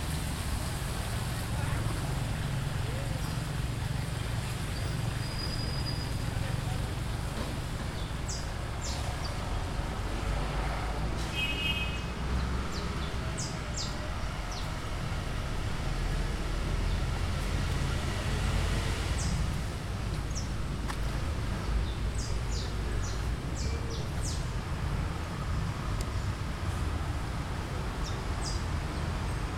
GRABACIÓN STEREO, TASCAM DR-40 REALIZADO POR: JOSÉ LUIS MANTILLA GÓMEZ
20 June, 13:25